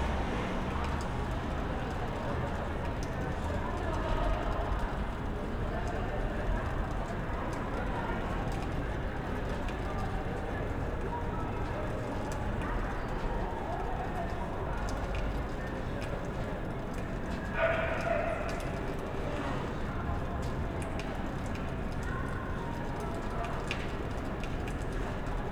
Köln, Maastrichter Str., backyard balcony - night ambience
backyard, night ambience: city sounds, voices, echo of trains
(SD702, Audio Technica BP4025)
Köln, Deutschland